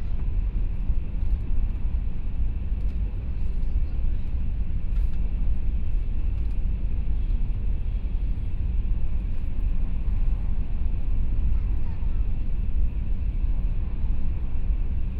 Taiwan High Speed Rail, from Taichung Station To Chiayi, Binaural recordings, Zoom H4n+ Soundman OKM II